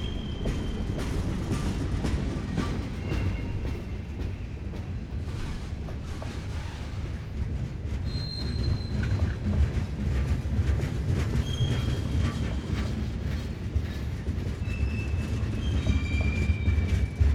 S Alabama St, Indianapolis, IN, USA - Eastbound CSX Freight Train in downtown Indianapolis
A CXS Freight Train Eastbound out of downtown Indianapolis. No horn because that section of track going through downtown is elevated. The trains have to slow to a crawl coming through downtown. Record on April 22, 2019 at 9:29 pm. Recorded with Sony ICD-SX712 using the recorder’s onboard mics.
22 April 2019